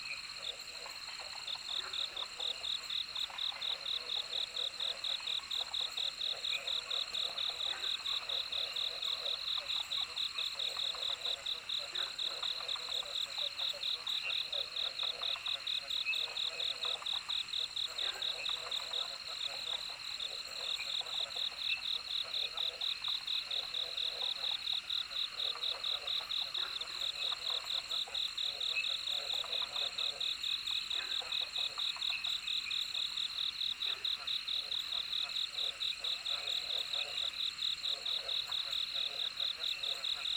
江山樂活, 埔里鎮桃米里 - Frogs chirping and Insects called
Frogs chirping, Insects called, Bird sounds, Dogs barking
Zoom H2n MS+XY